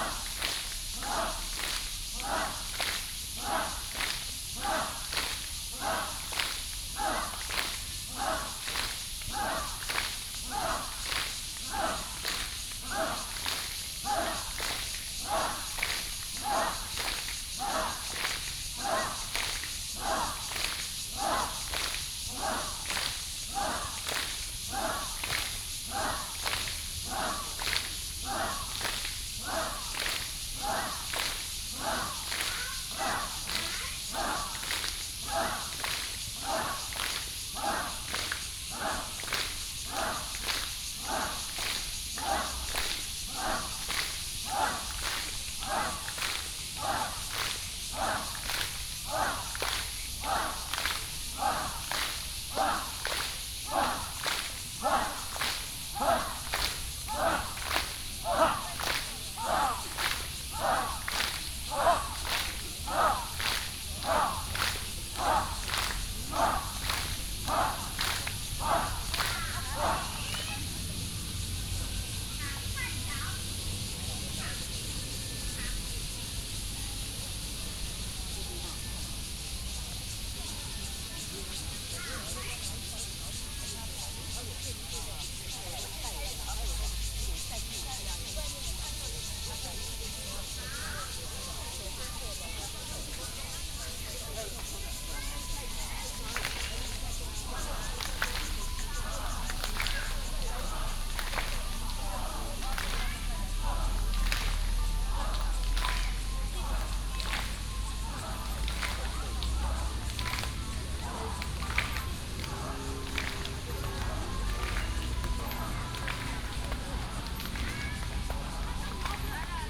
in the Park, Many elderly people doing health exercises, Cicada cry, Birds sound, traffic sound